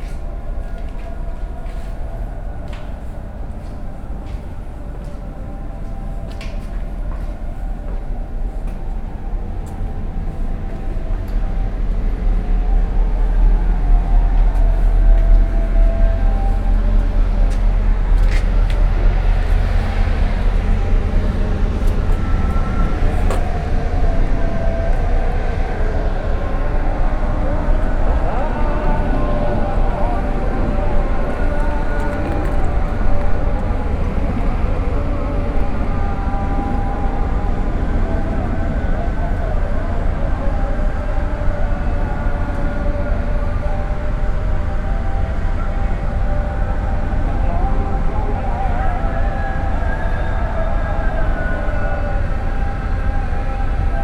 Beyoğlu, Turkey - Antrepo. Walk to roof
Climb up a metal staircase to the roof of this harbour warehouse. Sounds of mosques, seagulls, ships.
recorded binaurally - DPA mics, DAT tape.